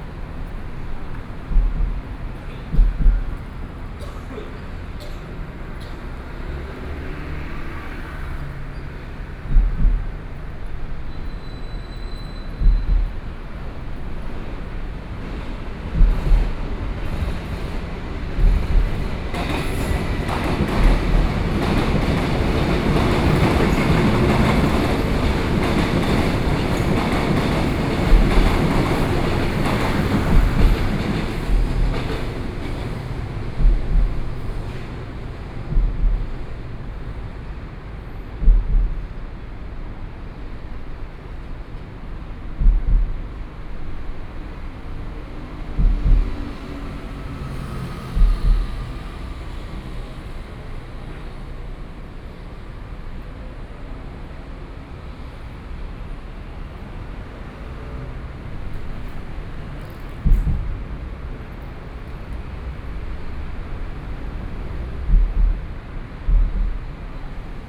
Taoying Bridge, Taoyuan County - In Luqiao below
In Luqiao below, Traffic Noise, Train traveling through, Sony PCM D50 + Soundman OKM II
Taoyuan City, Taoyuan County, Taiwan, 11 September 2013